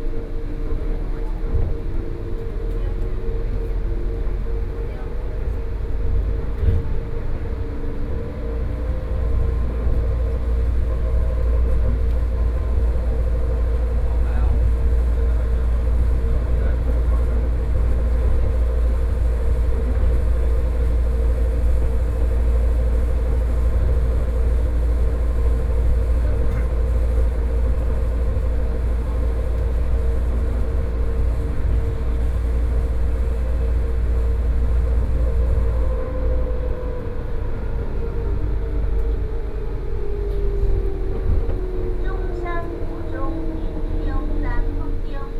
Brown Line (Taipei Metro) - inside the Trains
Brown Line (Taipei Metro)from Zhongxiao Fuxing Station to Songshan Airport Station, Sony PCM D50 + Soundman OKM II